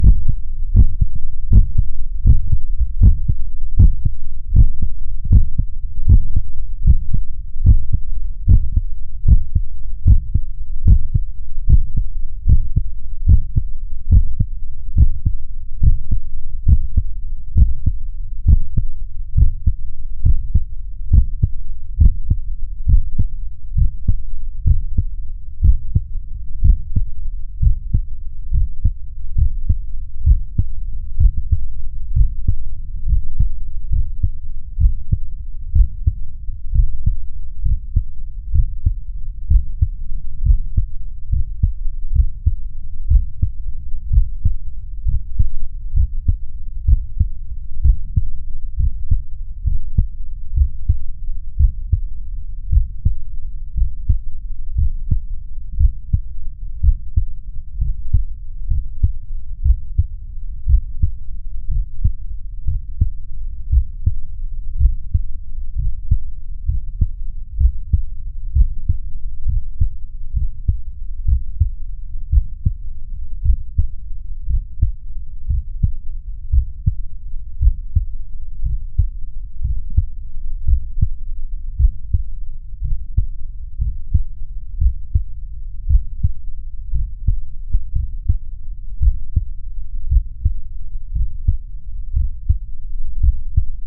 Pont des Demoiselles, Toulouse, France - Hearth
Stethoscope / Soundman microphones / Zoom H4
22 March 2014, ~09:00